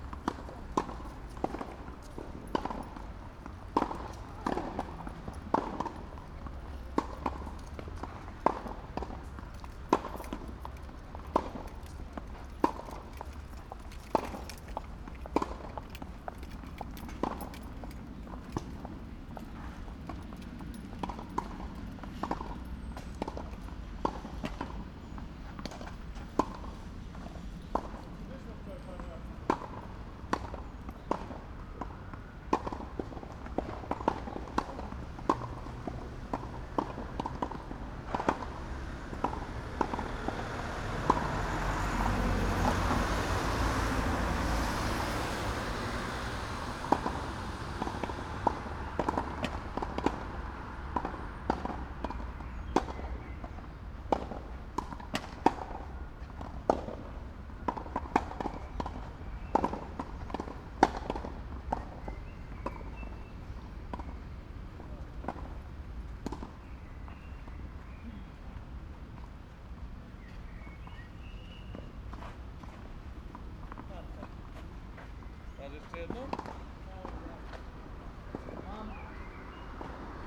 Poznan, downtown, Nosowskiego street, tenis courts - afternoon practice
tennis ball pop right left right, players groaning when smashing the ball
Polska, European Union